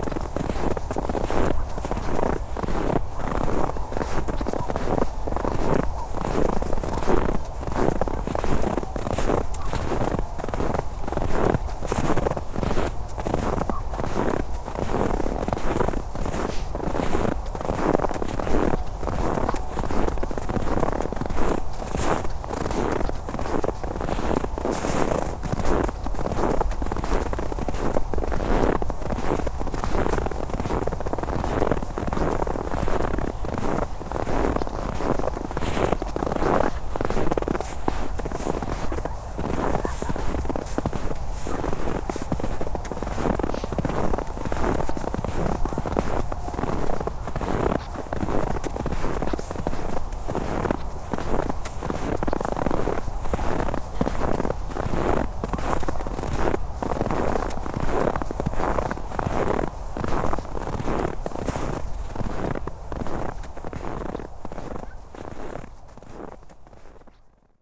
berlin, tempelhofer feld: grasland - the city, the country & me: grassland
cold and windy afternoon (-10 degrees celsius), snow walk, steps in the snow
the city, the country & me: december 4, 2010
4 December, 15:27